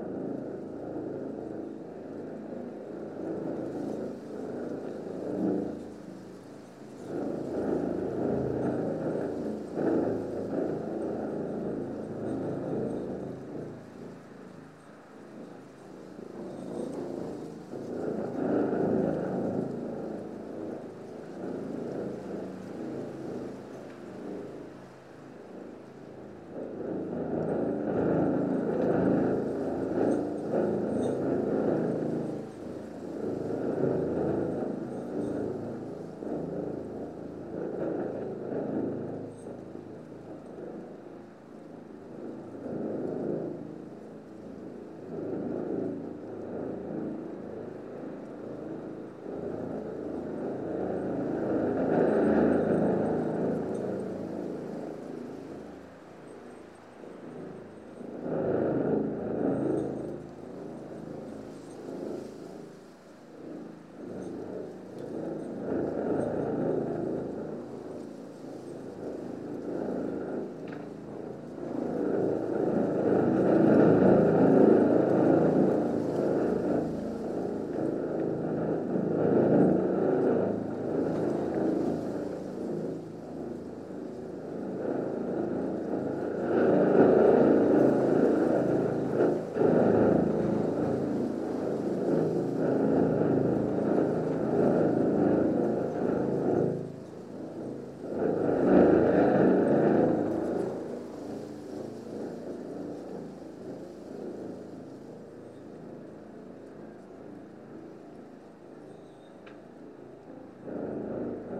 Nemeiksciai, Lithuania, wind in a tube
small microphones in a horizontal hollow tube
4 February 2018